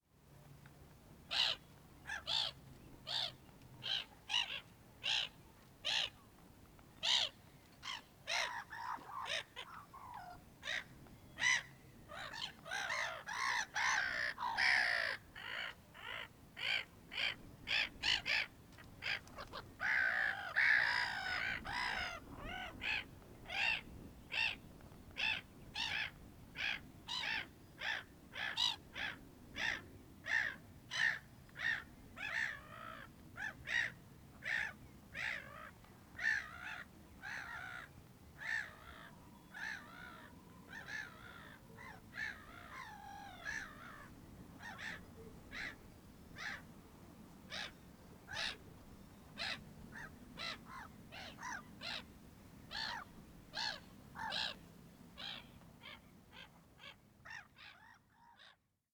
woudsend: lynbaen - the city, the country & me: seagulls
fighting seagulls
the city, the country & me: june 21, 2011
Woudsend, The Netherlands, 21 June 2011